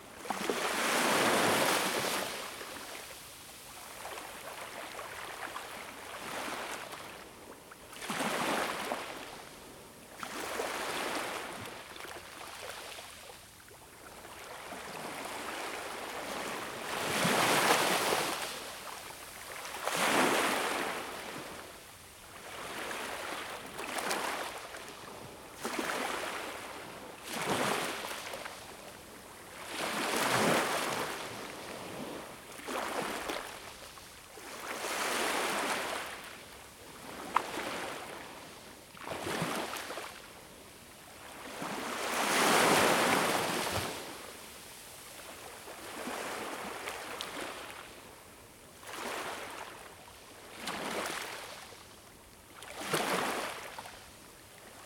Göynük, Unnamed Road, Kemer/Antalya, Турция - The sound of the sea in the morning recorded next day
The sound of the sea in the morning recorded next day with Zoom H2n
Türkiye